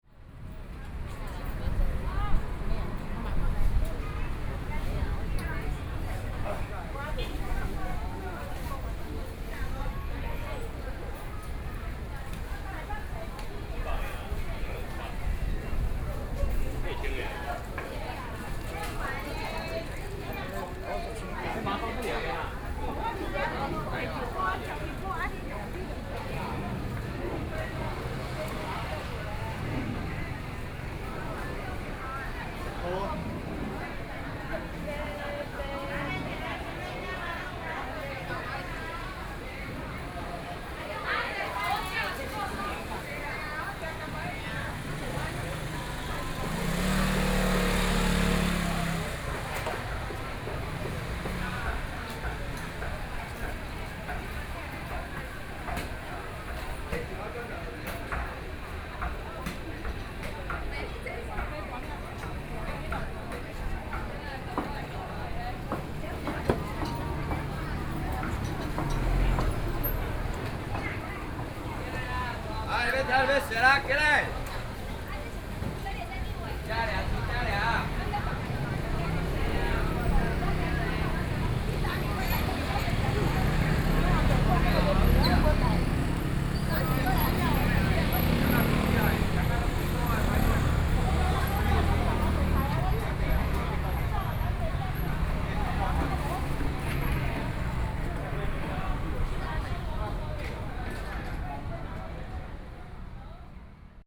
Daren Rd., Yancheng Dist. - Traditional Market
Walking through the Traditional Market